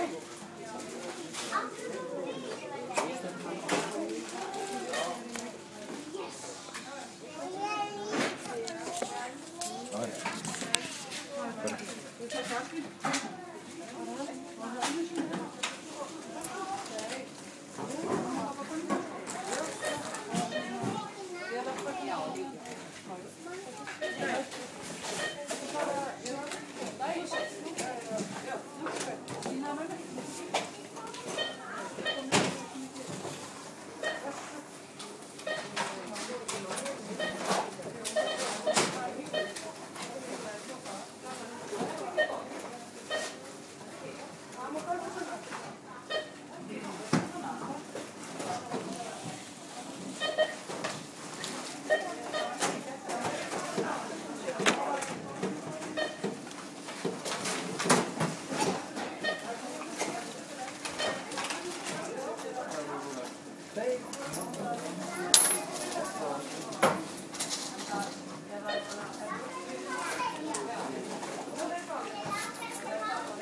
shopping in Kasko grocery store in Husavik, Iceland
Iceland, 21 July 2010